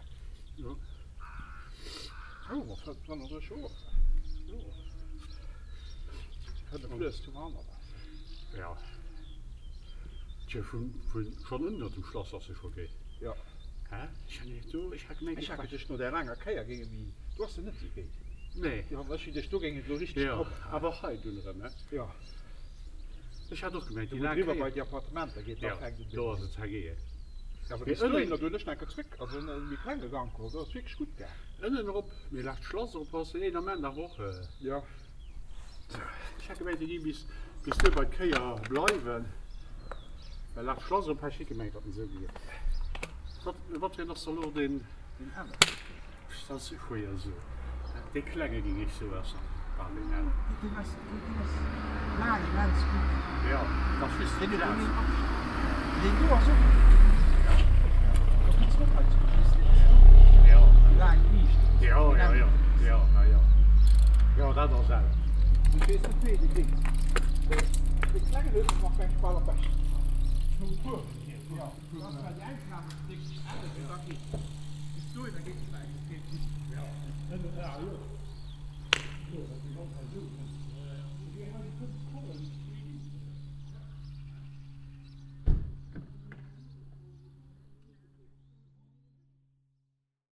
bourscheid, schlasswee, traffic
At the small towns main street in the morning time. Two bicycle riders coming up the hill and stop to meet and talk in local dialect. Meanwhile a passenger and some cars passing by.
Project - Klangraum Our - topographic field recordings, sound objects and social ambiences